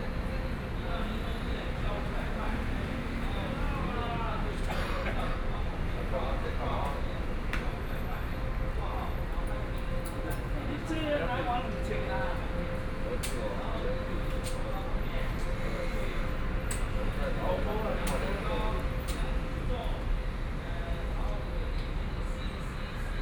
Pingtung Station, Taiwan - Taxi seating area
Outside the station, Taxi seating area